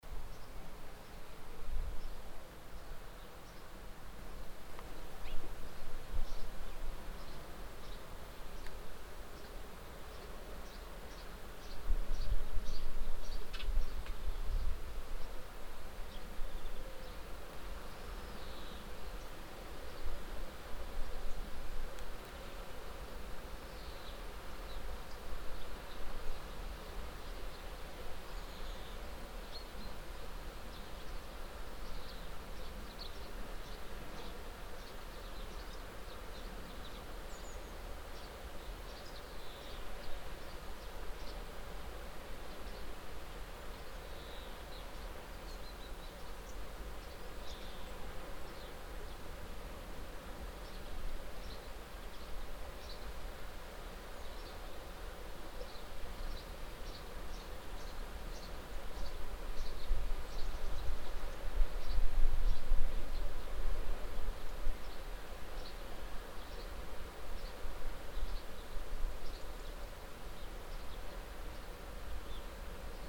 stodby, morning time ambience
morning time ambience close the house area - sparrows hunting each other- crossing the air in high speed, wind movements, cicades, an owl in the distance the waves of the sea
international sound scapes - social ambiences and topographic field recordings
Dannemare, Denmark, September 2010